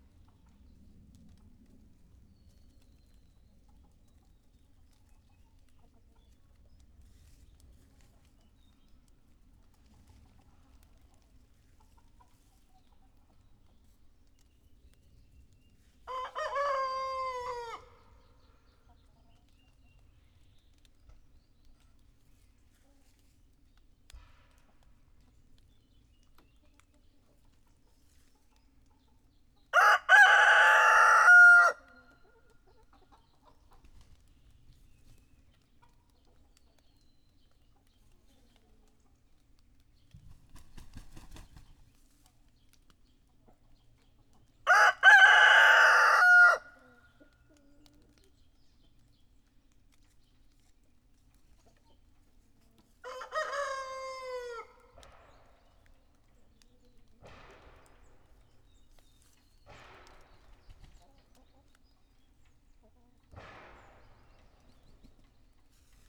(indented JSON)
{
  "title": "Netzow, Templin, Deutschland - hen-coop, roosters",
  "date": "2016-12-18 14:15:00",
  "description": "village Netzow, hen-coop, impressive big roosters carking\n(Sony PCM D50, Primo EM172)",
  "latitude": "53.16",
  "longitude": "13.50",
  "altitude": "59",
  "timezone": "GMT+1"
}